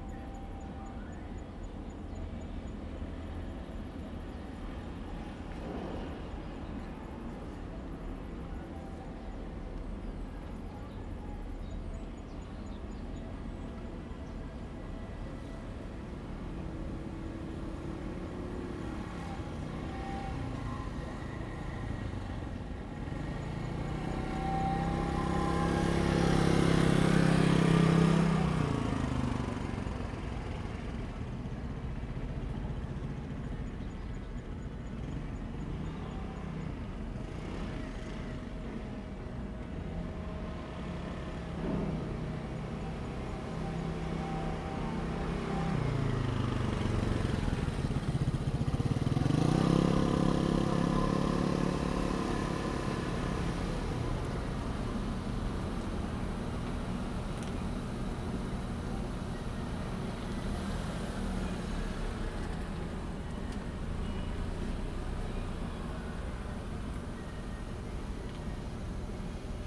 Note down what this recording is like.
Visitor Centre, in the Park, Ambient sound, Zoom H2n MS+XY +Sptial Audio